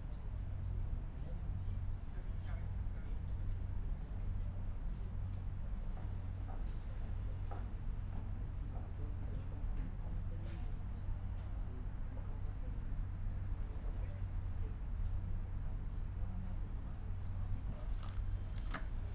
main station, Aix-en-Provence, France - station ambience
morning ambience Aix en Provence main station, announcement, regional train is arriving, drone
(PCM D50, Primo EM172)
8 January 2014, 08:30